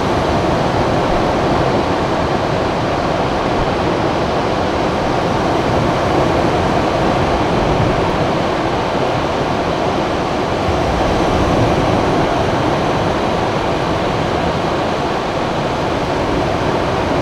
Big Tribune Bay, Hornby Island, BC, Canada - Heavy waves at high tide
Very large waves crashing in after sunset. Telinga stereo parabolic mic with Tascam DR-680mkII recorder.
15 August 2015, 20:30